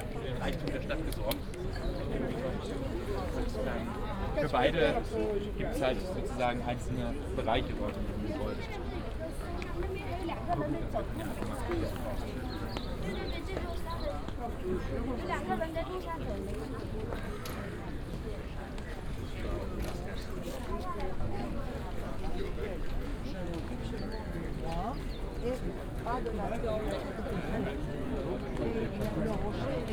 Athens, Acropolis - steps at the main gate
(binaural) first part of the recording is made on stone steps a the gate to Acropolis. tourists and guides of all nationalities move slowly towards the entrance. for the second part i move a few steps further into structure where wooden steps and floor is attached to a scaffolding. steps of tourists boom and reverberate of the close walls. (sony d50 + luhd pm-01bin)
Athina, Greece, 6 November